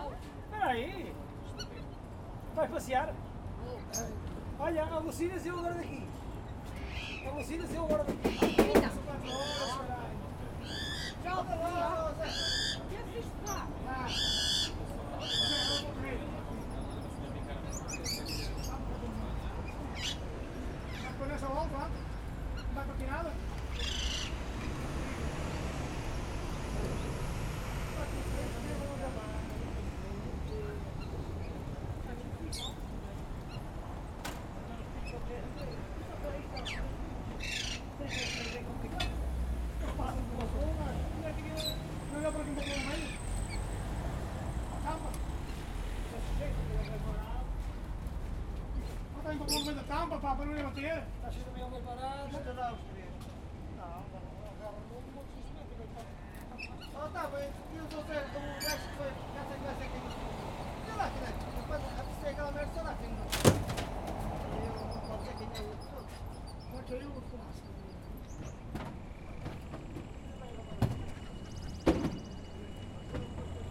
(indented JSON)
{
  "title": "Vitória, Portugal - Birds Fair, Porto",
  "date": "2014-12-14 14:15:00",
  "description": "The Birds Fair in Porto.\nThe last vendors are packing bird cages into a truck.\nZoom H4n",
  "latitude": "41.15",
  "longitude": "-8.62",
  "altitude": "94",
  "timezone": "Europe/Lisbon"
}